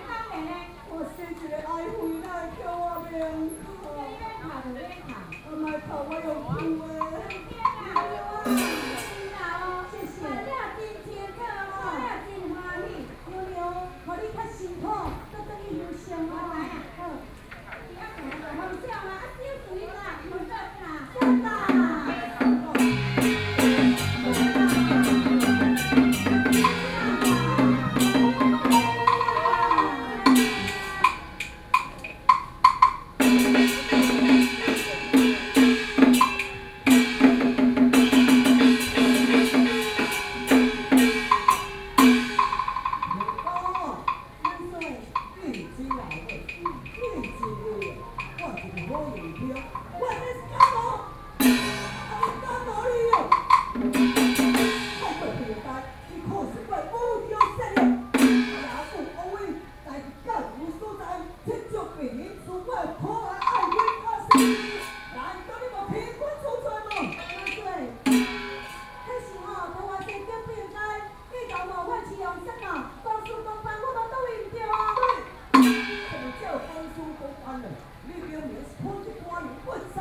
Buddhist Temple, Luzhou District, New Taipei City - Taiwanese Opera
Outdoor Taiwanese Opera, Standing close to the drums, Binaural recordings, Sony PCM D50 + Soundman OKM II
22 October 2013, ~17:00